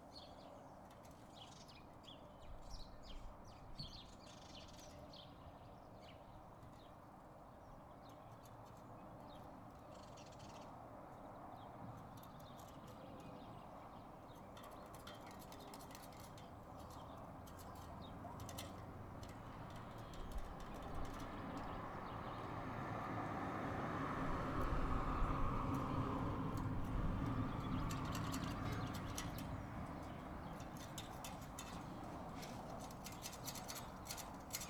St Ovins Green, Ely - gate percussion
recorded from an upstairs window as neighbour scraped clean an iron gate for re-painting
Ely, Cambridgeshire, UK, 21 May